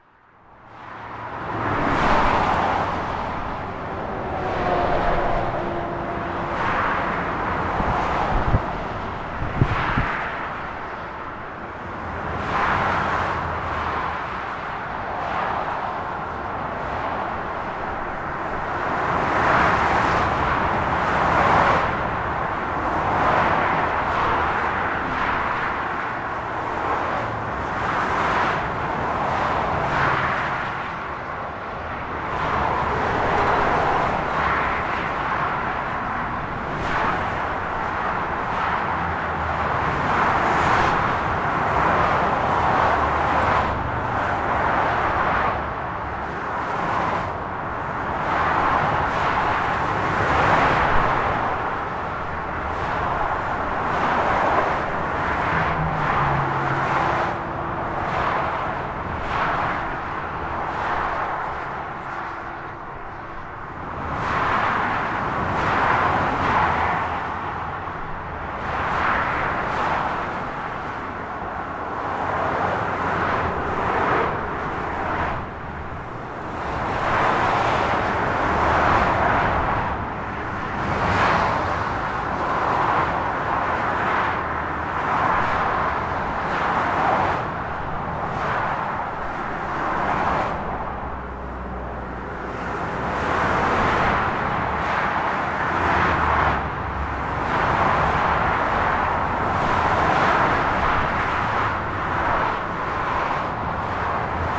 Pont sur, Mouxy, France - Fureur
La fureur de la circulation automobile sur l'A41 depuis le pont de Mouxy, micros orientés vers le Sud. Je m'étais allongé sur le trottoir pour protéger les micros du vent du Nord, ce qui a inquiété à juste titre un cycliste de passage, nous avons beaucoup parlé des bruits, cette autoroute est quand même une énorme nuisance pour le voisinage, quand on a connu comme c'était avant il y a de quoi se poser des questions.
Auvergne-Rhône-Alpes, France métropolitaine, France